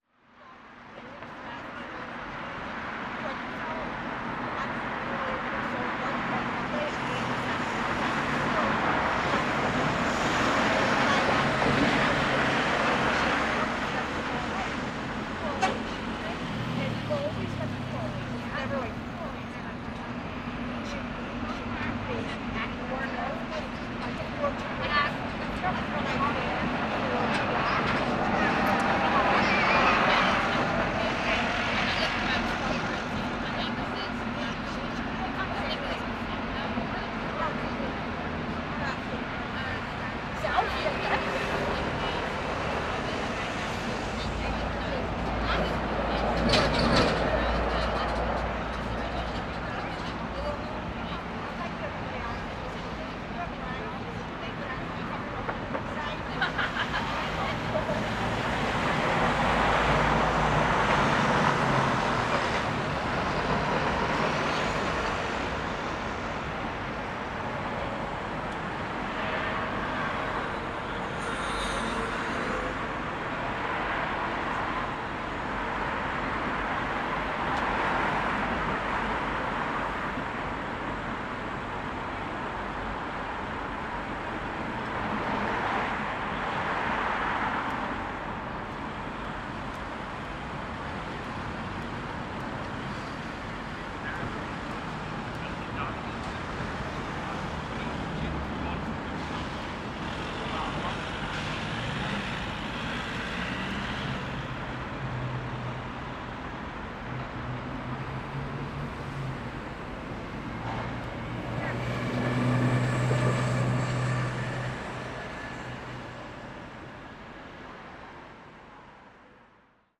Donegall Square N, Belfast, UK - Belfast City Hall
Recording of more locals and visitors passing at the junction that intertwines city centre, daily shopping, and commuters. There is a lot more chatter in the nearby area as people are heading home due to the new Lockdown 2 in Belfast beginning.
Northern Ireland, United Kingdom, 16 October 2020